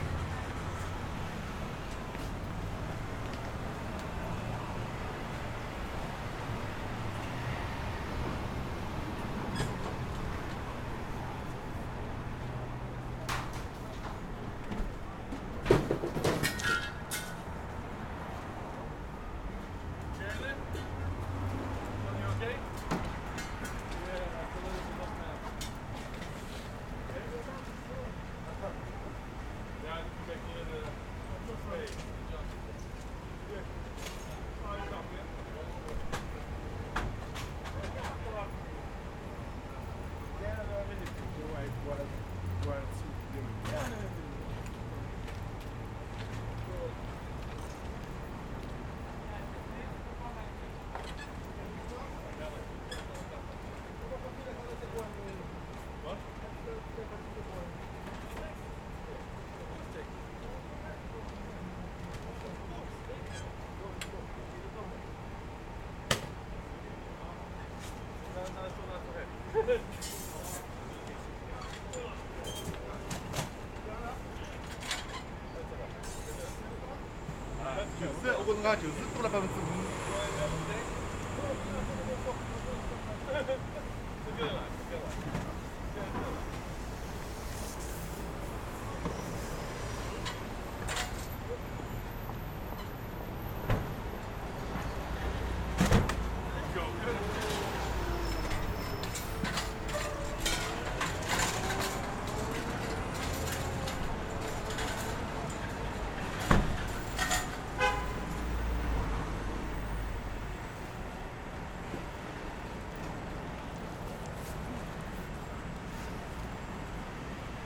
Lexington Ave Ambience.
Sounds of traffic, people walking, and workers unloading materials from a van.